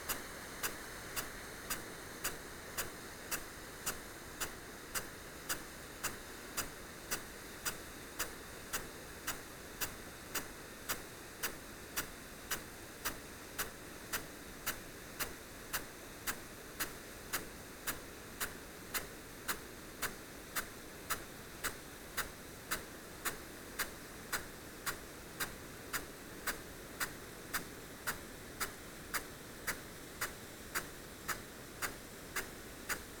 Green Ln, Malton, UK - field irrigation system ...
field irrigation system ... parabolic ... Bauer SR 140 ultra sprinkler to Bauer Rainstar E irrigation unit ... standing next to the sprinkler ... as you do ...
England, United Kingdom, 21 May 2020